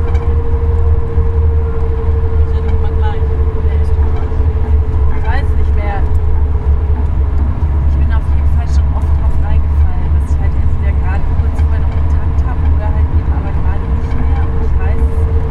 bernhard-nocht str 16 to the antipodes
movement and inadvertant sound collusion